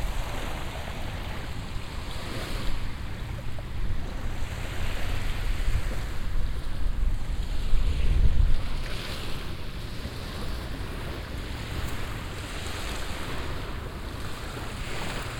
vancouver, nw marine drive, beach, wind + water
at the beach in cold windy autum afternoon, four tank ships ankering close by, the city in the far background, seagulls, sun dawn
soundmap international
social ambiences/ listen to the people - in & outdoor nearfield recordings